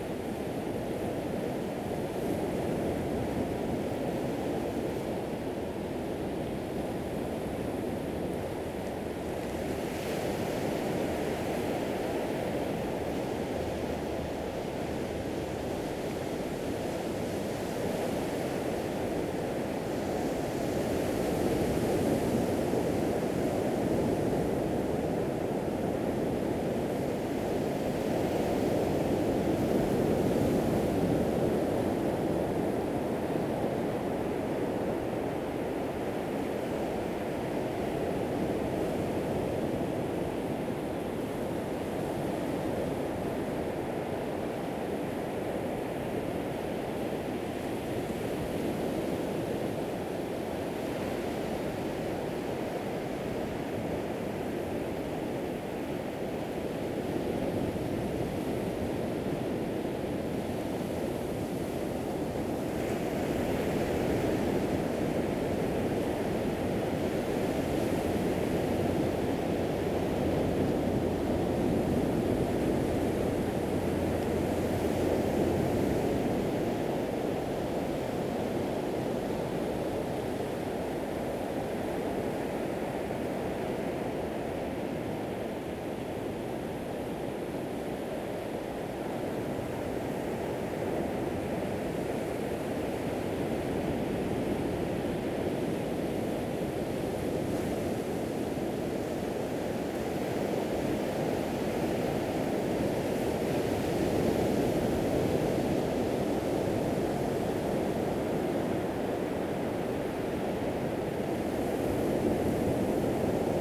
sound of the waves, At the seaside
Zoom H2n MS+XY
Taitung County, Taiwan, 8 September 2014